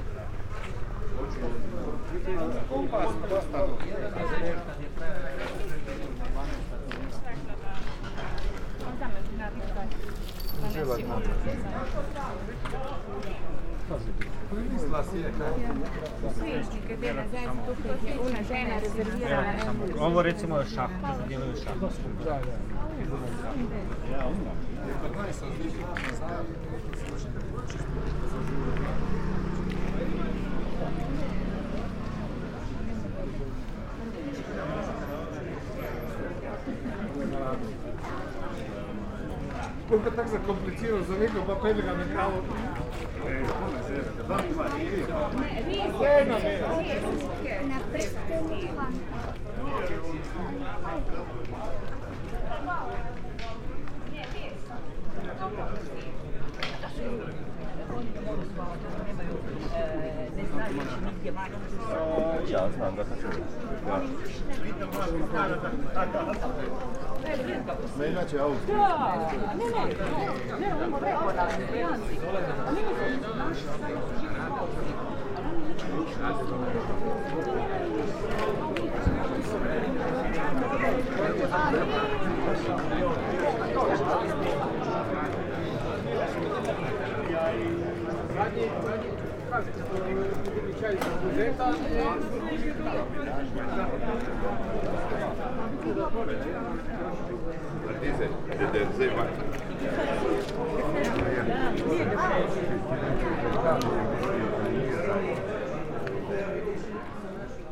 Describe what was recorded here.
sunday, antique market, slowly walking through indoor (loggia) and outdoor ambiences, voices - small talks, bargaining, cars, moped, steps